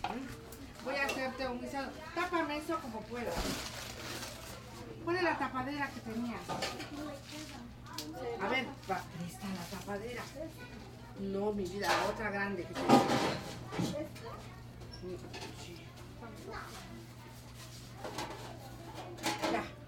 Casa Hogar Hijos de la LUNA, Oaxaca, Mexico - Cocina
Cocinando para los hijos de la luna